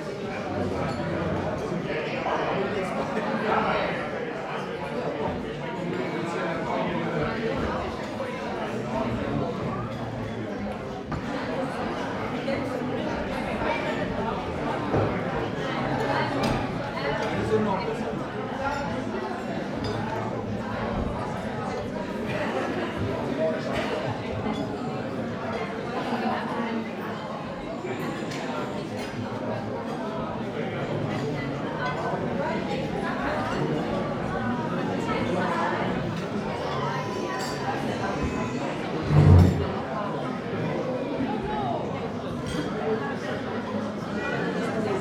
Kurhaus Dangast - cafe ambience
Kurhaus Dangast, popular cafe restaurant for weekenders
(Sony PCM D50, Primo EM172)